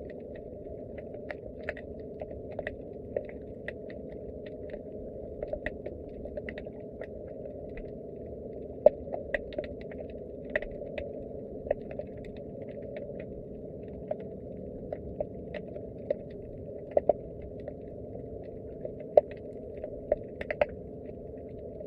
sounds of the seaside / hypdrophone under pier.
hypdrophone under Weymouth pier. Not sure what the haunting sound is, possible it is the sound of cars driving off the pier and onto the ferry.
Dorset, UK, 31 July, 13:23